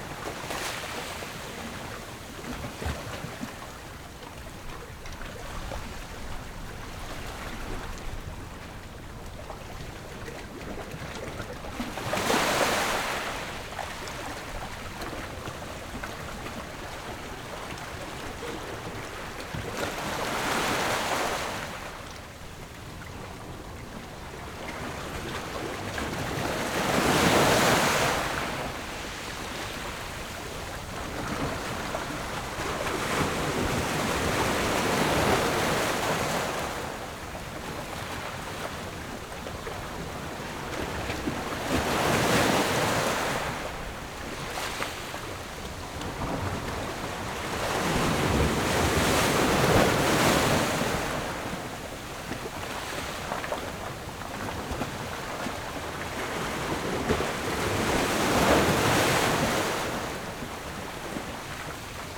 Jizanmilek, Koto island - Sound of the waves
Sound of the waves
Zoom H6 +Rode NT4
29 October 2014, 13:28